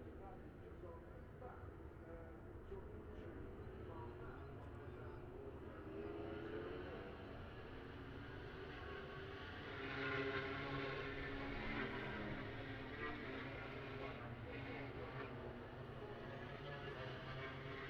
Silverstone Circuit, Towcester, UK - British Motorcycle Grand Prix 2018 ... moto grand prix ...
British Motorcycle Grand Prix ... moto grand prix ... free practice two ... copse ... lavalier mics clipped to sandwich box ...